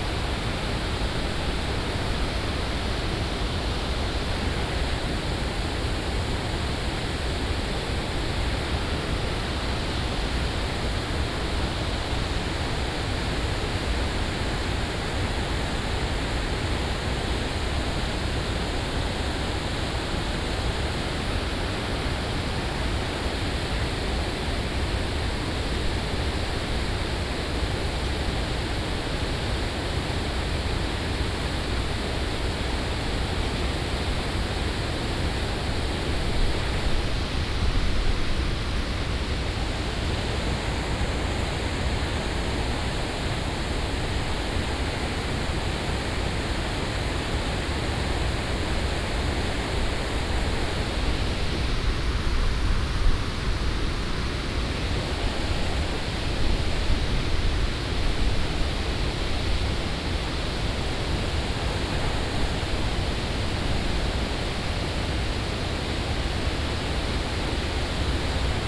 石岡水壩, Shigang Dist., Taichung City - barrage dam
a concrete gravity barrage dam, Binaural recordings, Sony PCM D100+ Soundman OKM II